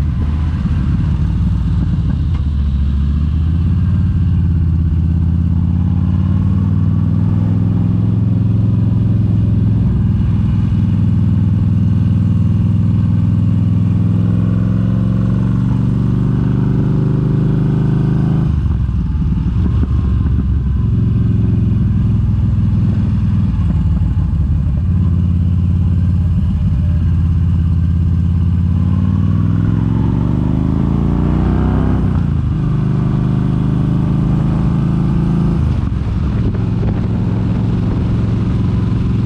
The Circuit Office, Oliver's Mount, Olivers Mount, Scarborough, UK - a lap of oliver's mount ...
a lap of olivers mount ... on a yamaha xvs 950 evening star ... go pro mounted on helmet ... re-recorded from mp4 track ...
England, United Kingdom, August 2022